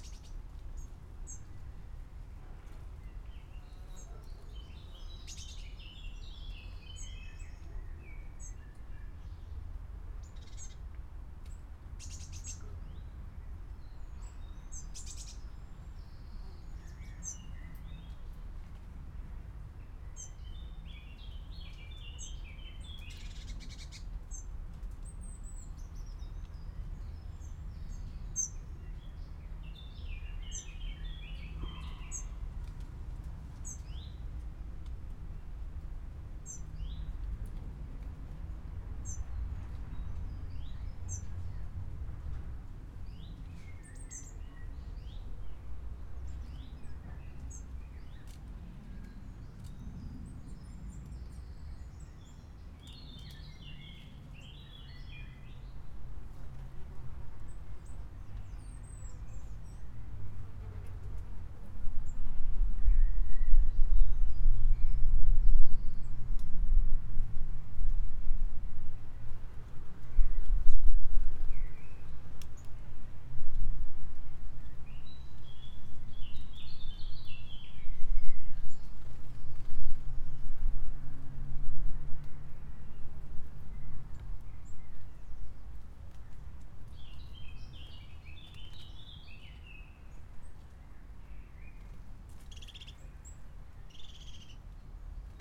Bruxelles, Cimetière du Dieweg / Brussels, Dieweg cemetary / World listening day : World listening day. A few birds, rather quiet in this abandonnes-d cemeary.
Uccle, Belgium, 18 July 2010, ~15:00